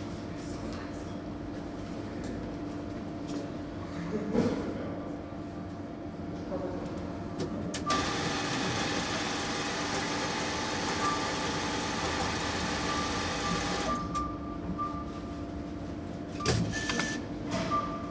sparkasse, cash machine
Wed., 03.09.2008, 23:30
i discovered that there was no money left, so i've quickly decided to make a sound walk to the bankomat. here's where the walk ended.
Köln, 3 September 2008, 23:30